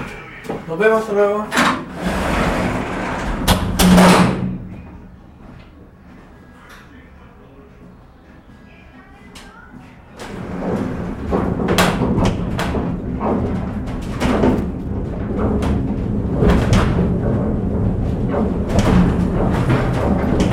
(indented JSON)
{
  "title": "Funicular Cerro Cordillera - Funicular",
  "date": "2015-11-13 14:00:00",
  "description": "Insite a funicular in Valparaiso, on the \"Cerro Cordillera\"\nRecorded by a MS Setup Schoeps CCM41+CCM8\nIn a Cinela Leonard Windscreen\nSound Devices 302 Mixer and Zoom H1 Recorder\nSound Reference: 151113ZOOM0014",
  "latitude": "-33.04",
  "longitude": "-71.63",
  "altitude": "32",
  "timezone": "America/Santiago"
}